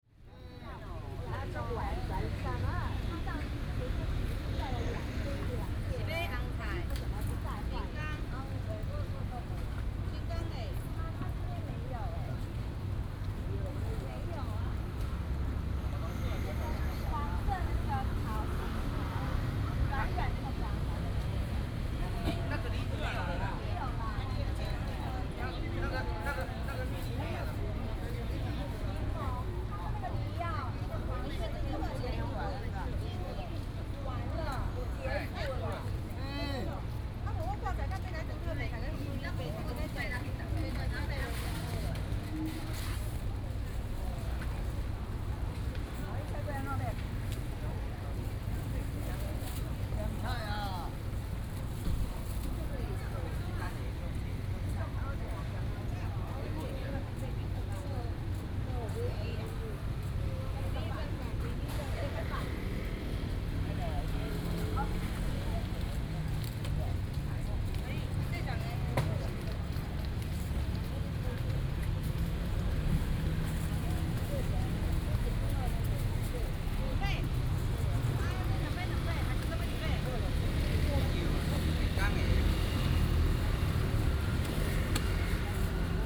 成功國宅, Taipei City - Vendors
Vendors
Binaural recordings
Sony PCM D100+ Soundman OKM II
Taipei City, Taiwan, 17 July 2015